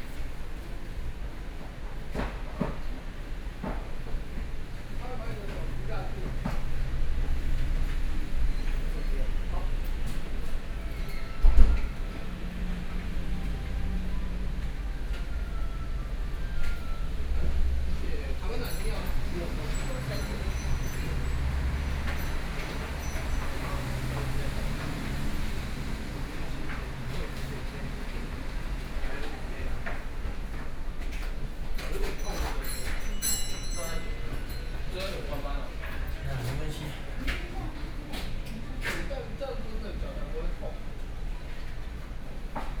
Zhongshan N. Rd., Taipei City - In the coffee shop
In the coffee shop, Sony PCM D50 + Soundman OKM II